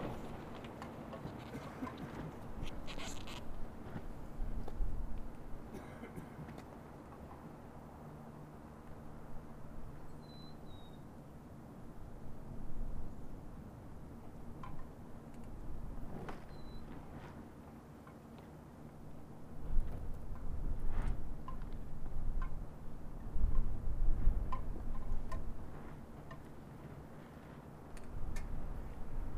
WHOA! i didnt know until just now that this recording started at 11:11 !!:!!
zoomh4npro
26 June 2018, ~11:00